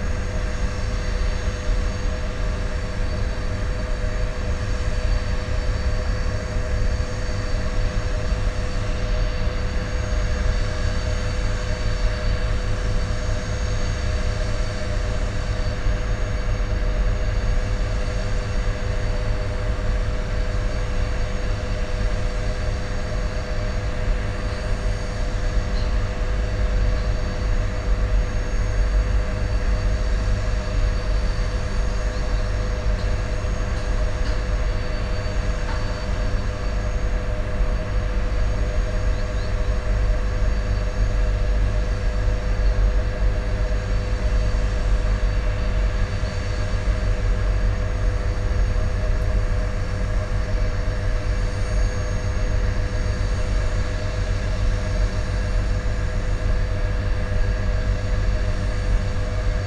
power station, Toplarniška ulica, Ljubljana - industrial ambience
near the thermo-electrical power station, buzz and hum from the electrical devices.
(Sony PCM-D50, DPA4060)